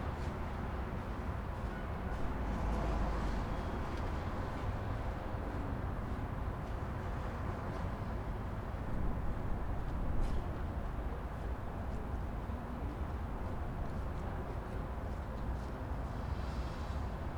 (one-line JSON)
{"title": "Olsztyn, Polska - Limanowskiego, backyard", "date": "2013-02-05 17:49:00", "description": "In the middle church bell ringing.", "latitude": "53.79", "longitude": "20.49", "altitude": "135", "timezone": "Europe/Warsaw"}